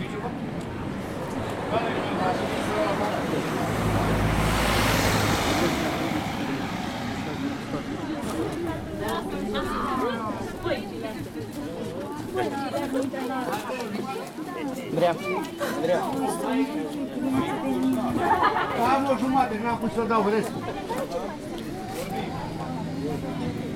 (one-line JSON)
{"title": "Str. Principala, Com. Cerasu, Prahova, Cerașu, Romania - Sunday fair", "date": "2015-10-18 13:00:00", "description": "Walking through a Sunday fair. Recording made with a Zoom h2n.", "latitude": "45.32", "longitude": "26.04", "altitude": "543", "timezone": "Europe/Bucharest"}